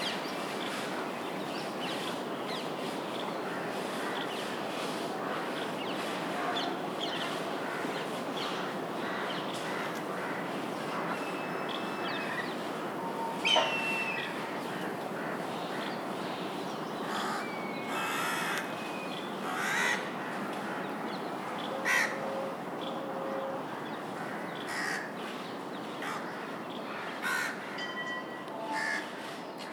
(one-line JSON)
{"title": "Bu Halimas Tomb, New Delhi, India - 02 Bu Halimas Tomb", "date": "2016-01-11 09:44:00", "description": "Morning soundscape: birds, workers, distant trains etc.\nZoom H2n + Soundman OKM", "latitude": "28.59", "longitude": "77.25", "altitude": "217", "timezone": "Asia/Kolkata"}